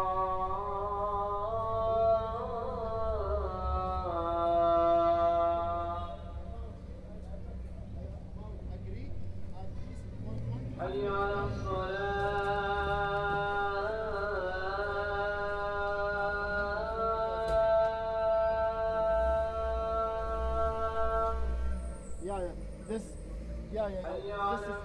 Evening adhan. Idris Khazhi Mosque in Makhachkala. Recorder: Tascam DR-40.